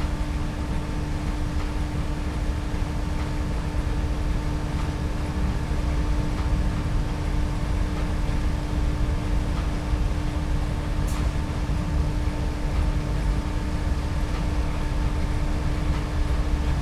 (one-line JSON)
{"title": "Stau/Hunte, Oldenburg - animal food factory", "date": "2016-09-14 10:40:00", "description": "Oldenburg, at the river Hunte, large animal food factory drone\n(Sony PCM D50, DPA4060)", "latitude": "53.14", "longitude": "8.24", "altitude": "2", "timezone": "Europe/Berlin"}